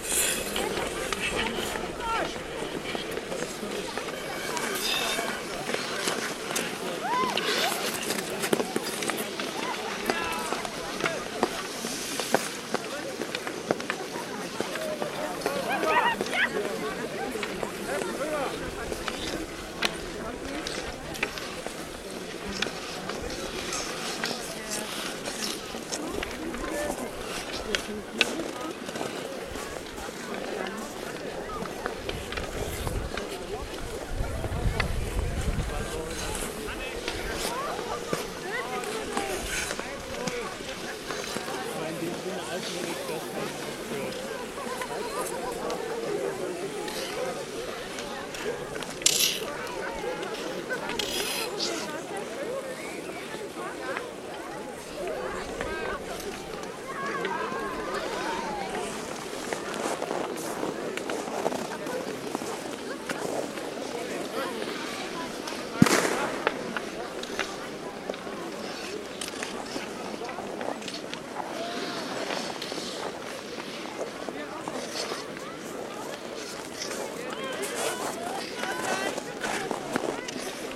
Erlangen, Deutschland, Neuweiher, Skating - skating
Skating and Icehokey
- olympus ls-5